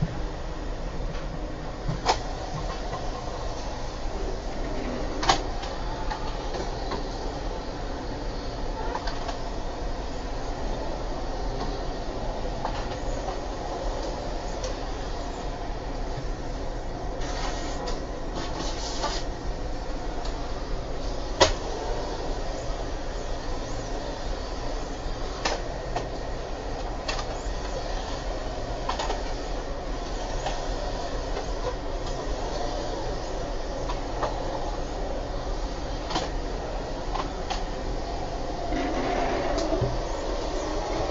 vacuuming the ferrys smoking salon
On the ferry Rødby - Puttgarden there is a smoking salon on board, with easy chairs to stretch out and look at the blue horizon, puffing blue clouds into your neighbours face, deodorized and fitted with puff-and-horizon blue carpeting. this is what this recording is about.